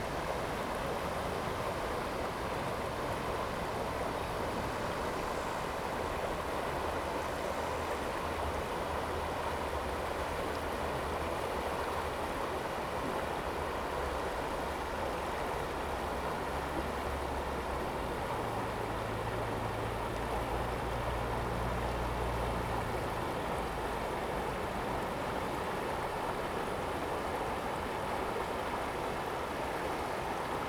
Ln., Baozhong Rd., Xinpu Township - stream
stream, Traffic sound
Zoom H2n MS+XY
Hsinchu County, Taiwan, 17 August 2017, 10:05